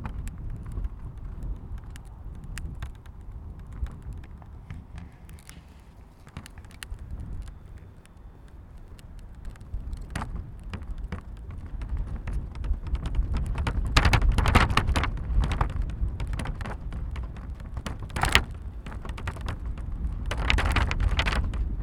Old Sarum, Salisbury, UK - 057 Planning notice in the wind.